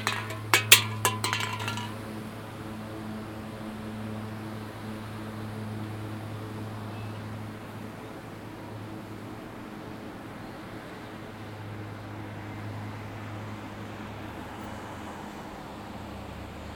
while waiting on the leaving signal of the sea bus - wind plays with a coke can pushing it down the steps of a stairway.
soundmap international
social ambiences/ listen to the people - in & outdoor nearfield recordings